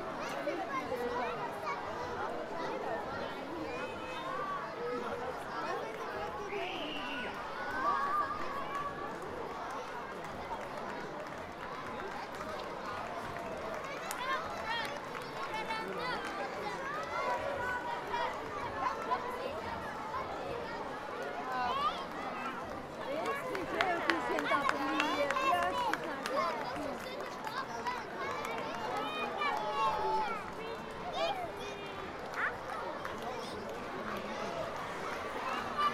Bachfischet, Aarau, Schweiz - Bachfischetzug
Once in a year, the students of Aarau walk with lanterns through the city. The event is said to come from medival ages, when the brooks of the city were cleaned once a year. The students produce the lanterns themselves, thus every year it is also a parade of new designs. You hear the drums in front of the parade, then the whole parade, the recording is made within the audience, who comments on the lanterns ('pinguine!', 'das Aarauer Stadtwappen), as well as the singing students, who always sing the same song: «Fürio de Bach brönnt, d Suhrer händ /ne aazöndt, d Aarauer händ ne glösche, / d Chüttiger, d Chüttiger riite uf de Frösche!».
Aarau, Switzerland